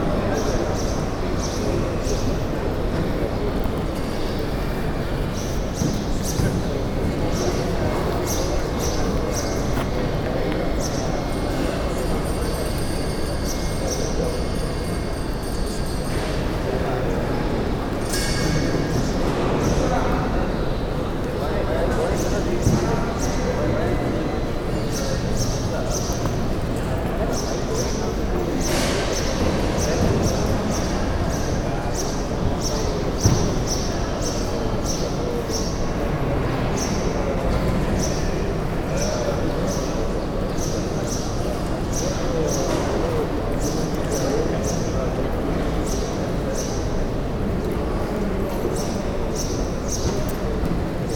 behind the passport
control - a second recording of the same sitation - from a different angle
inernational soundscapes - social ambiences and topopgraphic field recordings